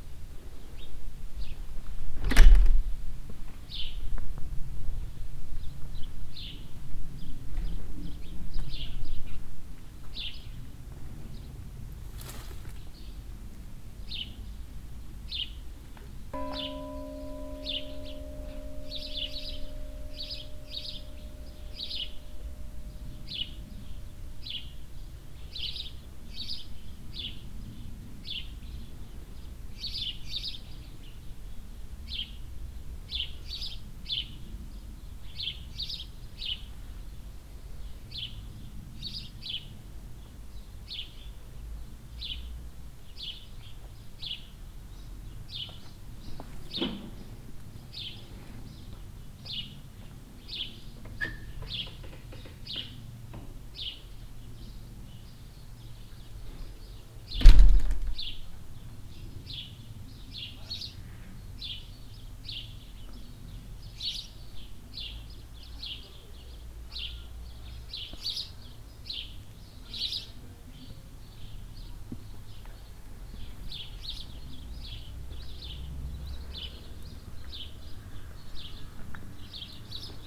{"title": "lieler, church door and old bell", "date": "2011-07-12 13:33:00", "description": "Opening and closing the old church door then walking on the footpath and playing an old bell that is positioned at the cementery entrance covered by the chirping of the overall present birds.\nLieler, Kirchentür und alte Glocke\nÖffnen und Schließen der alten Kirchentür, dann Laufen auf dem Fußweg und Spielen der alten Glocke, die am Friedhofseingang angebracht ist, überdeckt vom Tschirpen der allgegenwärtigen Vögel.\nLieler, porte de l'église, vieille cloche\nLa vieille porte de l’église qui s’ouvre et se ferme, puis des pas sur le chemin et le son d’une vieille cloche installée à l’entre du cimetière, le tout couvert par le gazouillis des nombreux oiseaux présents.\nProject - Klangraum Our - topographic field recordings, sound objects and social ambiences", "latitude": "50.13", "longitude": "6.11", "timezone": "Europe/Luxembourg"}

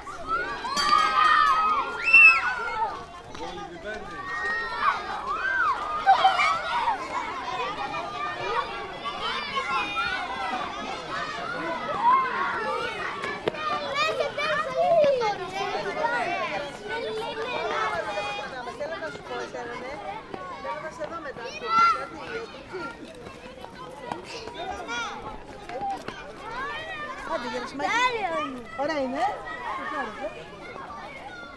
{"title": "Athens, Dionysiou Areopagitou street - kids chasing hackney cab", "date": "2015-11-06 12:14:00", "description": "a bunch of excited kids rushing out of a side street to chase a hackney cab. (sony d50)", "latitude": "37.97", "longitude": "23.73", "altitude": "101", "timezone": "Europe/Athens"}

